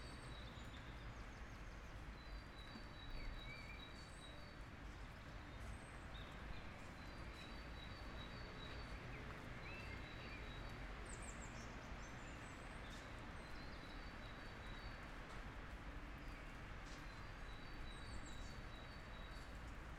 Recorded on a rainy sunday in London, Streatham/Brixton Hill